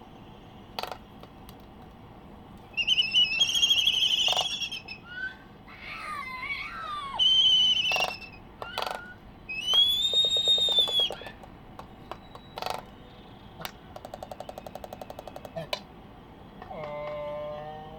United States Minor Outlying Islands - Laysan albatross dancing ...
Sand Island ... Midway Atoll ... laysan albatross dancing ... background noise from voices ... carts ... a distant fire alarm ... Sony ECM 959 one point stereo mic to Sony Minidisk ...
25 December 1997